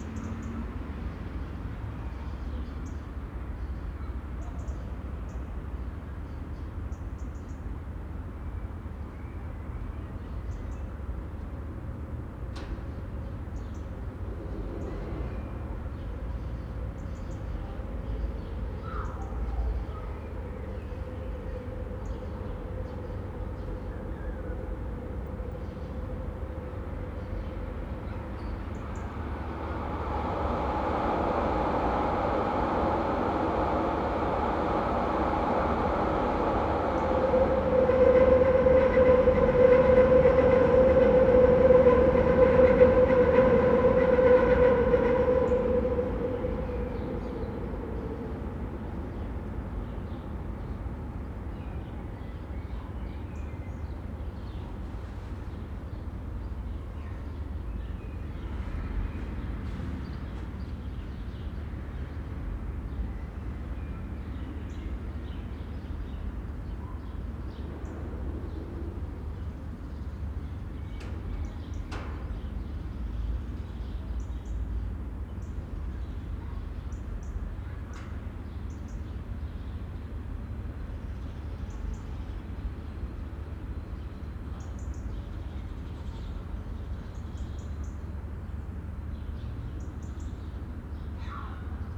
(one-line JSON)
{"title": "Wassertorstraße, Berlin, Germany - U-Bahn reverberation from multiple directions", "date": "2020-11-04 16:39:00", "description": "At this point the different gaps and surfaces of the apartment buildings channel the sound of passing trains in different directions. There are multiple versions all at once. It is like a Picasso cubist painting, but in sound.", "latitude": "52.50", "longitude": "13.41", "altitude": "39", "timezone": "Europe/Berlin"}